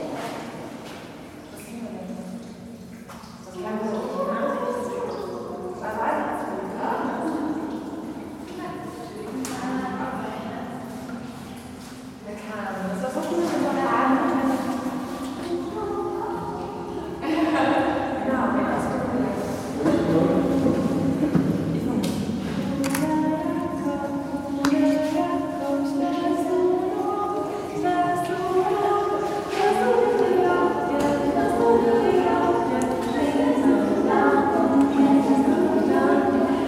Pumping Plant Danube Island
descending spiral stairs and singing in pump room of sewage pumping plant on Donauinsel (Danube Island) adjacent to the 2006 quantum teleportation experiment site.
Vienna, Austria, 8 December 2011, ~15:00